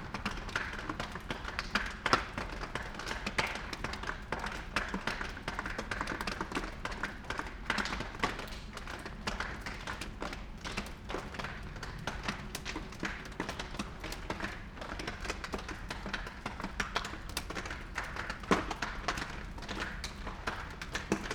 Kl.Steinstr., Halle (Saale), Deutschland - rain percussion on plastic cover
Kleine Steinstr., Halle, rain drops falling on a plastic cover in front of a very old house, cold, wet and quiet Monday evening.
(Sony PCM D50, Primo EM172)
Halle (Saale), Germany, 24 October 2016